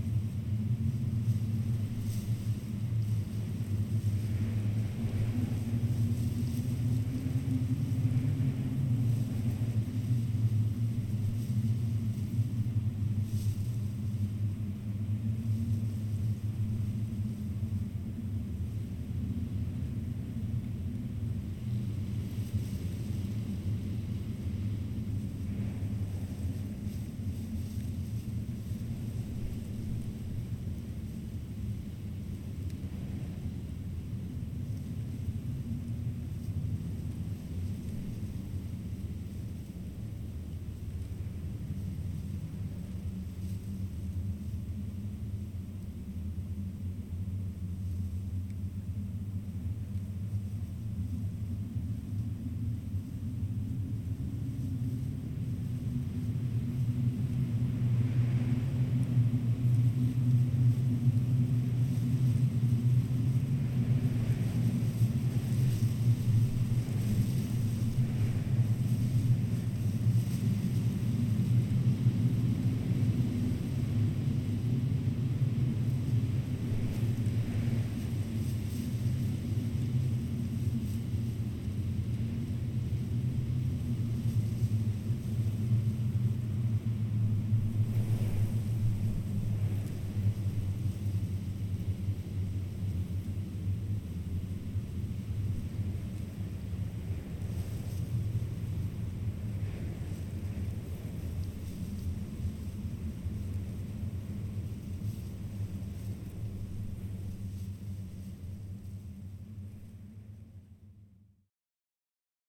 Vyzuoneles, Lithuania, wind on wires
Windy day, low hum of high voltage wires
Utenos apskritis, Lietuva, 3 May 2022